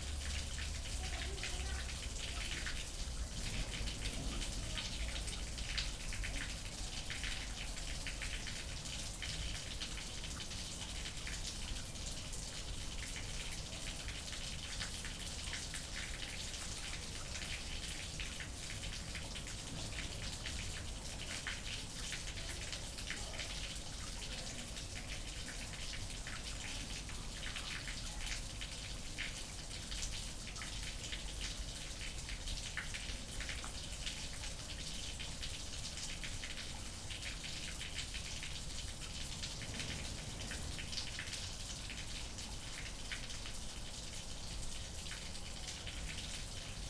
Domain du Schlassgoard, Esch-sur-Alzette, Luxemburg - river Alzette, water inflow
some drainage into river Alzette, which runs in a concrete canal. Inflow decreases suddenly
(Sony PCM D50, Primo Em272)